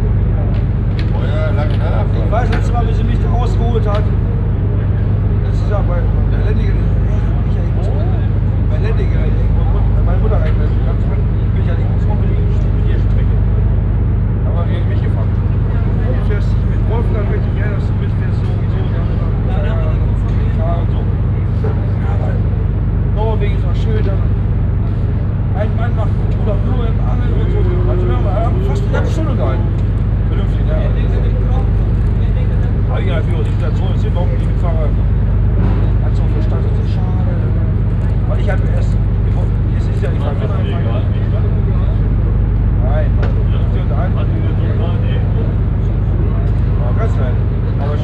On the ferry from Kiel to Oslo. On the sundeck in the early evening. The constant deep drone sound of the ship motor and a conversation of a group of german men on a a table nearby.
international sound scapes - topographic field recordings and social ambiences